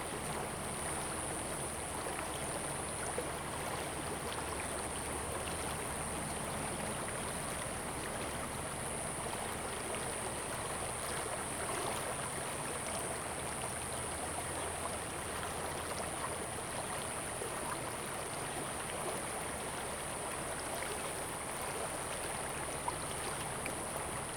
Stream, Bird sounds
Zoom H2n MS+XY